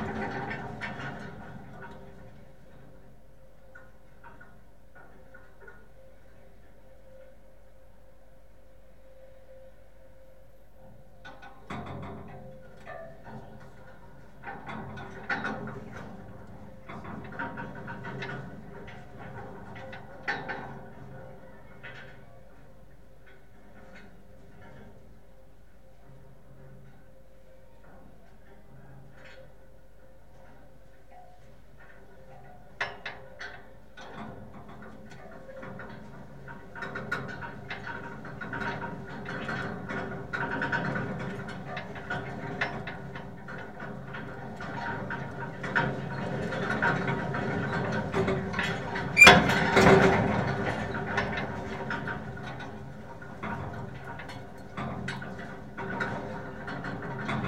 Rue de Mirepoix, Toulouse, France - metalic vibration 02
wind, scaffolding, metal moving structure
Captation : ZOOMh4n + AKG C411PP